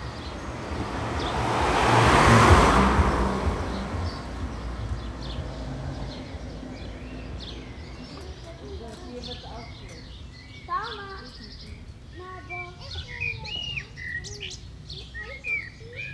Molsberg, Westerwald - kleiner Spielplatz / little playground
21.05.2009 Molsberg, Dorf mit Schloss im Westerwald, Feiertag, Kinder auf dem Spielplatz
little Westerwald village with castle, holiday, children playing
Germany, 21 May 2009, ~16:00